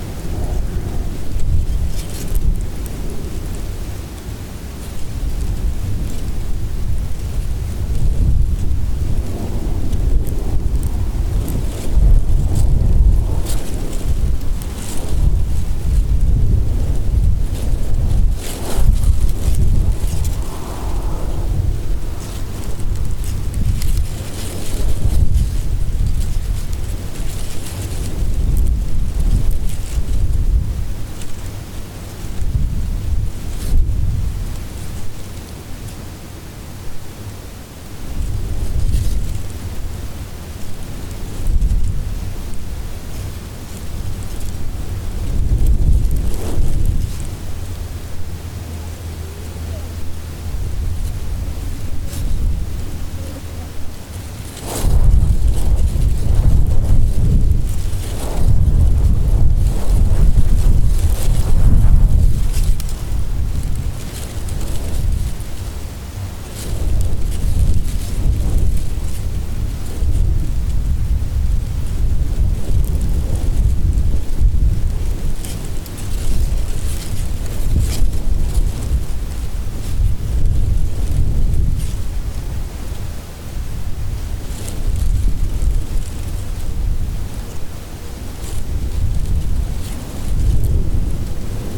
Liwa - Abu Dhabi - United Arab Emirates - Wind and dry vegatation - Liwa, Abu Dhabi
Recording of a very dry and windswept bush on the top of a sand dune in Liwa, Abu Dhabi, United Arab Emirates. I'm not sure if this is the precise location but it was close by.